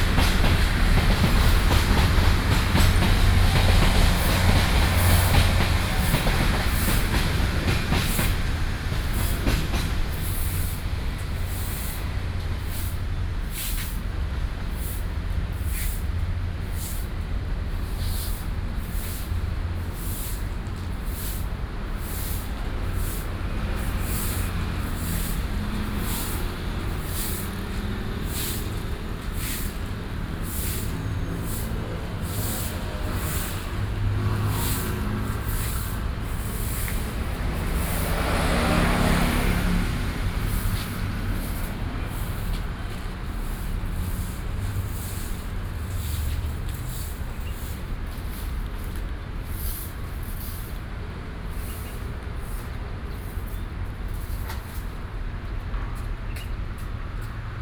Zhenqian St., Shulin Dist., New Taipei City - Traveling by train
Traveling by train, Traffic Sound
Sony PCM D50+ Soundman OKM II